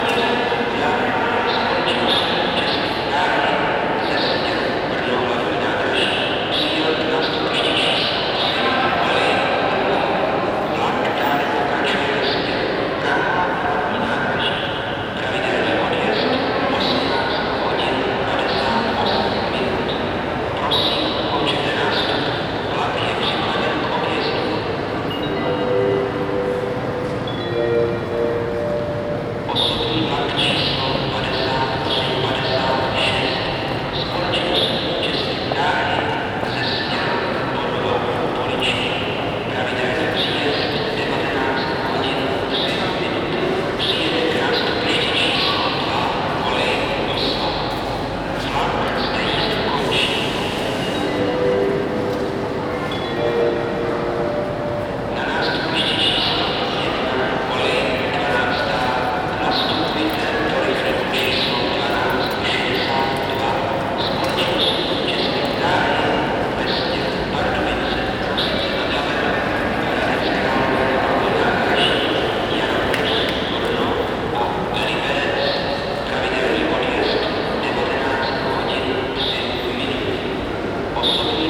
Pardubice Train Station, Pardubice, Česko - Pardubice Train Station
Recorded as part of the graduation work on sound perception.